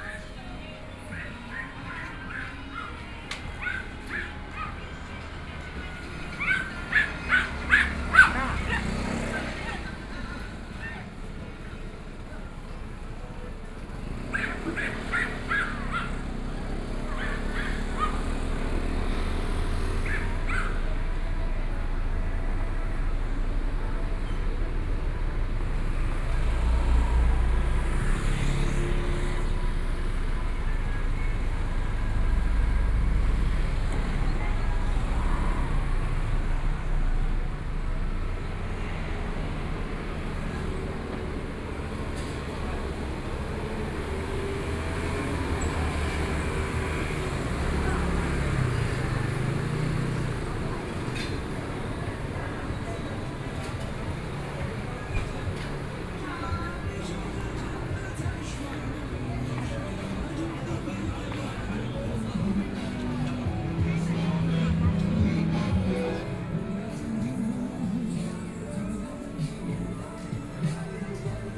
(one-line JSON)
{"title": "Fuxing St., Hualien City - walking in the Street", "date": "2013-11-05 14:48:00", "description": "Walking through in a variety ofthe mall, Binaural recordings, Zoom H4n+Rode NT4 + Soundman OKM II", "latitude": "23.98", "longitude": "121.61", "altitude": "15", "timezone": "Asia/Taipei"}